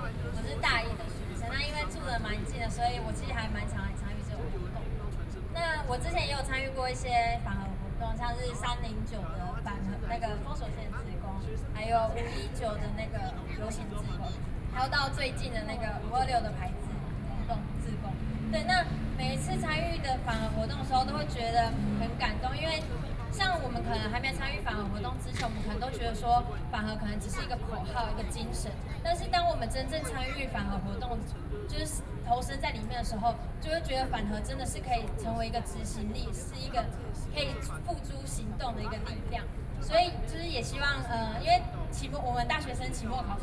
National Chiang Kai-shek Memorial Hall, Taipei - Civic Forum
anti–nuclear power, Civic Forum, Sony PCM D50 + Soundman OKM II
14 June, ~22:00